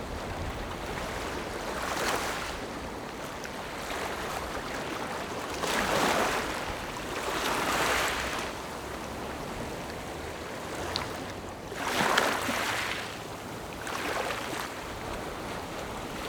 Waves and tides, In the small beach
Zoom H6 + Rode NT4
Magong City, 澎27鄉道